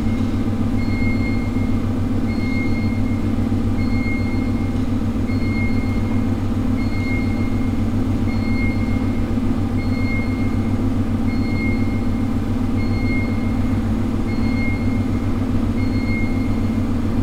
A coin-operated laundry, with a lot of washing machines operating. Soporific sound !